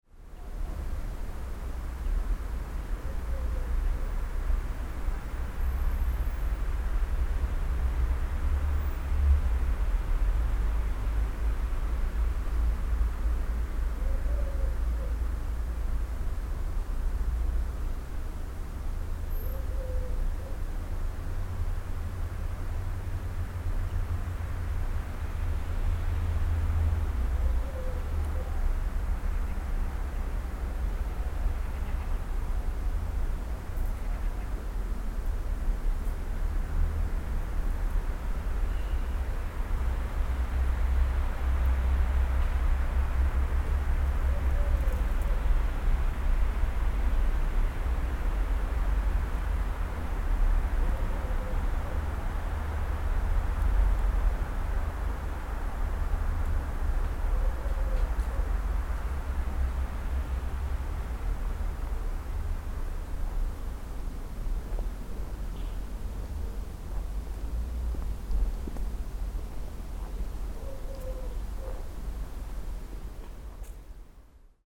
Atmosphere of a garden, Saint-Girons, France - Garden of an old house, St GIRONS, FRANCE.
Quiet atmosphere in the garden of an old house, in 6 avenue Galliéni, St Girons, France. Birds, and cars far away.